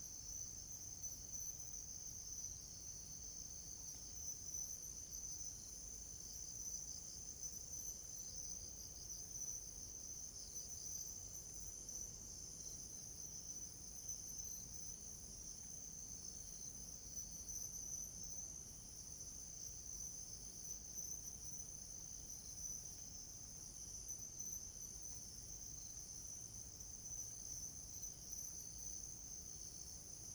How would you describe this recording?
Insects sound, Early in the mountains, Zoom H2n MS+XY